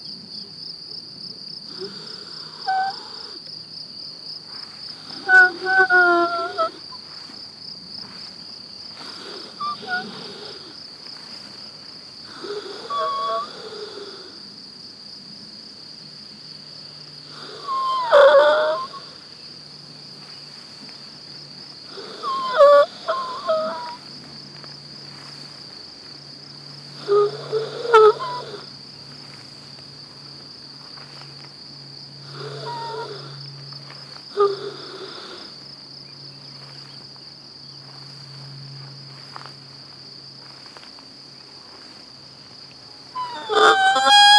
walk through high grass, far away train, birds, winds ...
Maribor, Slovenia, 23 May 2014